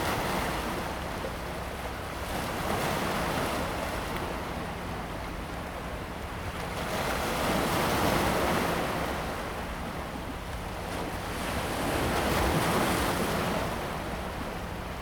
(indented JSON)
{
  "title": "淡水觀海長提, Tamsui District, Taiwan - On the banks of the river",
  "date": "2017-01-05 16:26:00",
  "description": "On the banks of the river\nZoom H2n MS+XY",
  "latitude": "25.18",
  "longitude": "121.42",
  "altitude": "2",
  "timezone": "GMT+1"
}